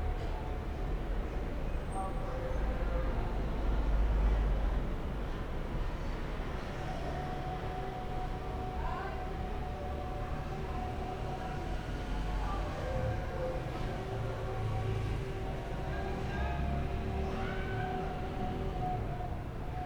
Rue LIB, Dakar, Senegal - distant chanting